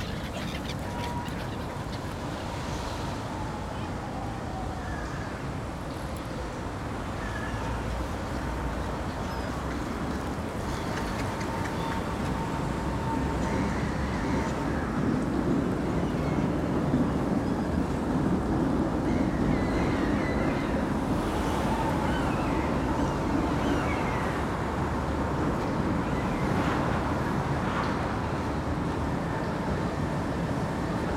Railbridge Vyton
Railbridge, Vyton, Prague, Field recording
21 February 2011, 16:00